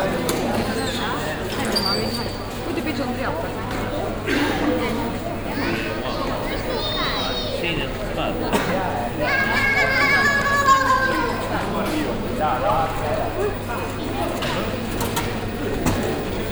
Inside as big warehouse for tools, construction and furniture. The sound of a swinging entry wing at the cash till area.
international city scapes - field recordings and social ambiences